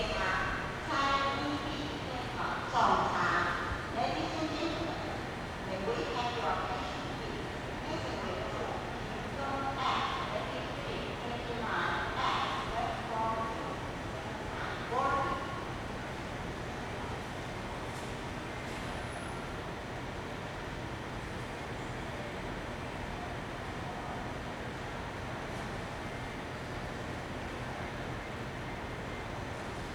Kaohsiung Station - Night station hall
The station hall at night, Station broadcast messages, Sony ECM-MS907, Sony Hi-MD MZ-RH1
左營區 (Zuoying), 高雄市 (Kaohsiung City), 中華民國, 29 March 2012, 23:24